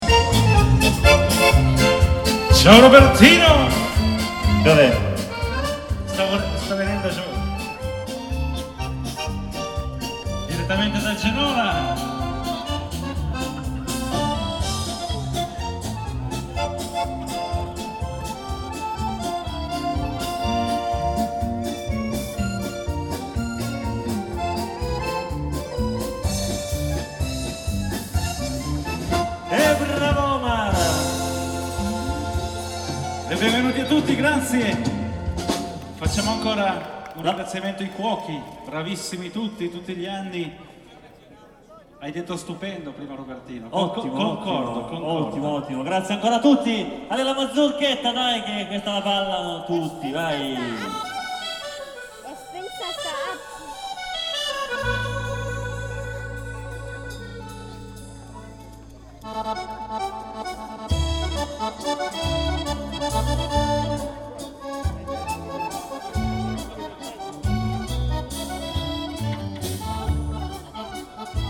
alto, fiesta sagra patata
fiesta sagra patata - third recording - here live music and announcements
soundmap international: social ambiences/ listen to the people in & outdoor topographic field recordings
25 July 2009